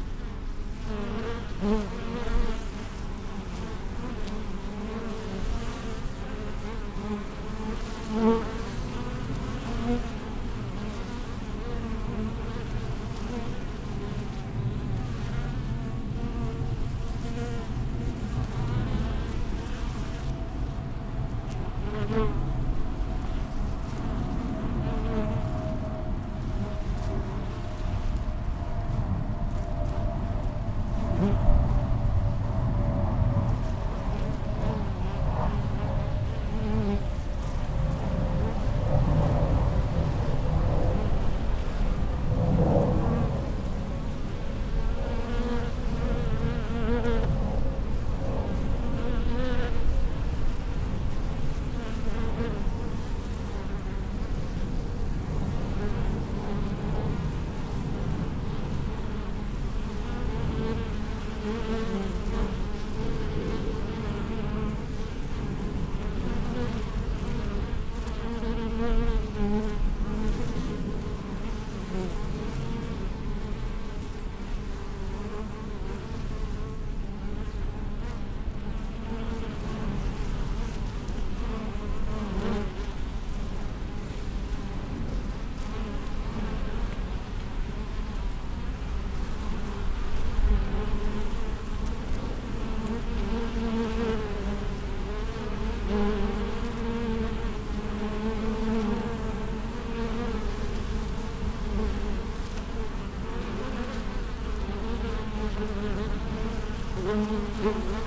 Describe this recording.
A hive of bees in the back yard at Cambria Rd. Bees, schoolyard, birds, planes. A hot day after morning storms. WLD 2014. Roland R-9 with electret stereo omnis